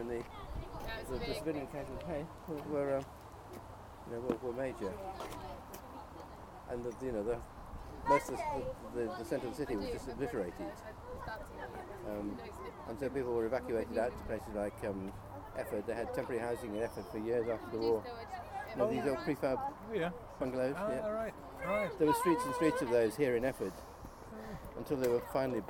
Efford Walk Two: Talking about the memorial - Talking about the memorial
Plymouth, UK, 24 September 2010, ~17:00